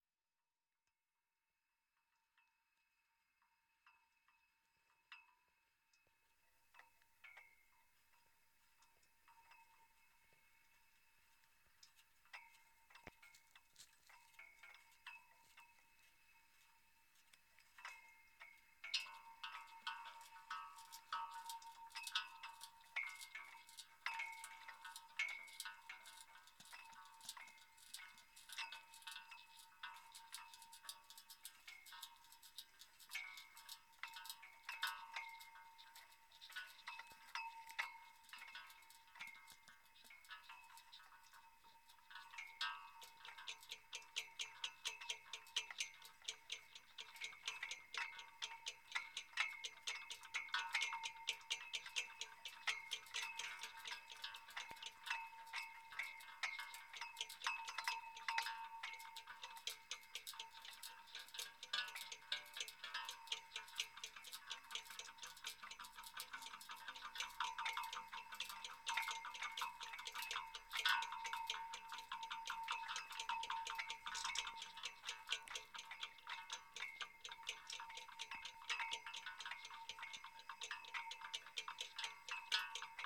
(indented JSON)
{
  "title": "Geunhwa-dong, Chuncheon-si, Gangwon-do, South Korea - at the flagpoles",
  "date": "2014-11-01 12:00:00",
  "description": "There is a line of flagpoles at the Korean War Memorial in Chuncheon. This recording was made in association with the early winter wind, and using 2 contact microphones and the external stereo mics of a sony PCM-10 recorder.",
  "latitude": "37.89",
  "longitude": "127.72",
  "altitude": "75",
  "timezone": "Asia/Seoul"
}